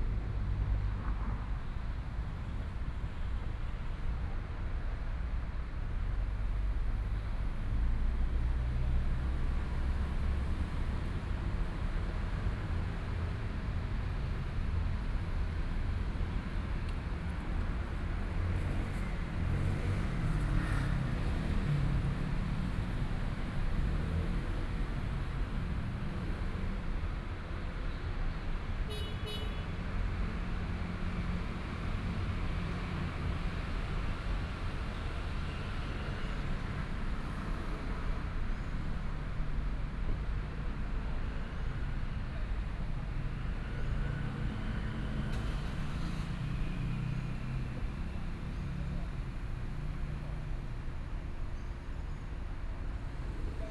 中山區聚葉里, Taipei City - Small park
Environmental sounds, Traffic Sound, Birds
3 April 2014, 11:41